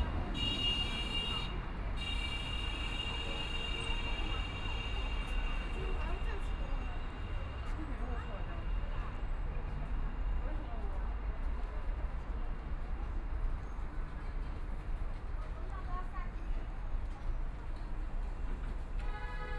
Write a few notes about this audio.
In front of the checkout counter, Binaural recording, Zoom H6+ Soundman OKM II